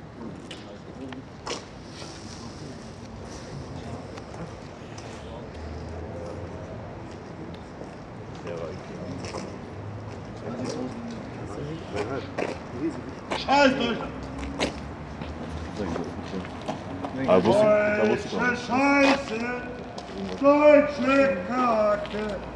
COUCOU LES NAUFRAGES ! fuck your brain - fuck your brain
COUCOU LES NAUFRAGES !